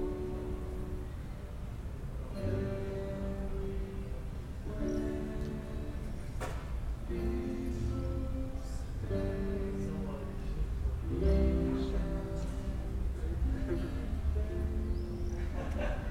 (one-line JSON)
{"title": "Köln, Maastrichter Str., backyard balcony - summer evening ambience", "date": "2013-07-17 21:10:00", "description": "neigbour's practising guitar, people on the balconies, swifts\n(Sony PCM D50, DPA4060)", "latitude": "50.94", "longitude": "6.93", "altitude": "57", "timezone": "Europe/Berlin"}